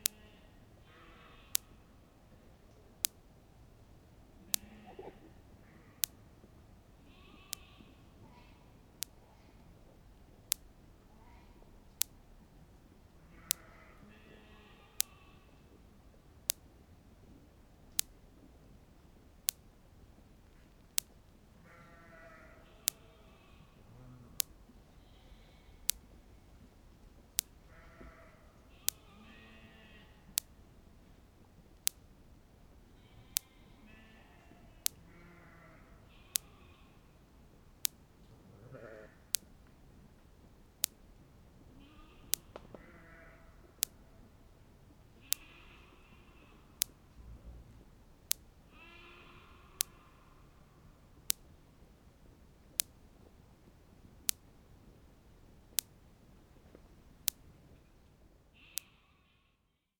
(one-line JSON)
{"title": "Beselich, Niedertiefenbach - electrical fence", "date": "2012-07-01 23:15:00", "description": "an electrical fence produces a sparkover in the moistly grass.\n(Sony PCM D50)", "latitude": "50.45", "longitude": "8.14", "altitude": "251", "timezone": "Europe/Berlin"}